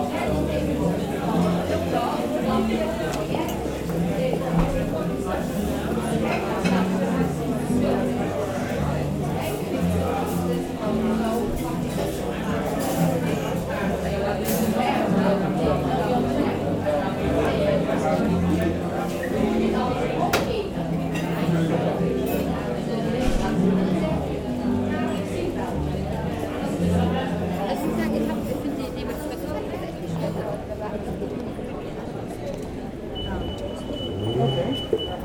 Maastricht, Pays-Bas - Noisy bar
A noisy and very busy bar during the lunchtime.
Maastricht, Netherlands